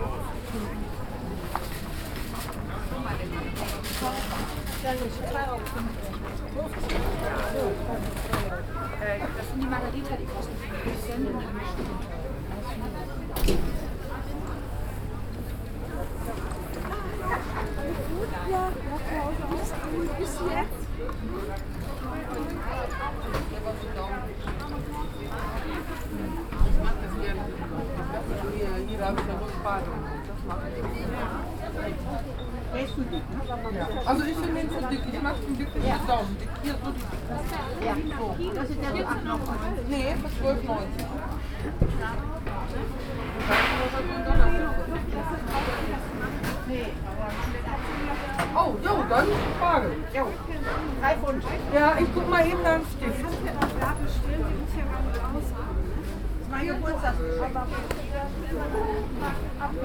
walking from West to East along the stalls, starting at the fountain up to beginning of Oststr, ; fewer stalls, fewer shoppers than other wise, every one waiting patiently in queues, chatting along…
i'm placing this recording here for a bit of audio comparison... even though my stroll in April 2020 is taking place a little closer round the church; during Christmas season the green market shifts because of the Christmas Market being set up round the church.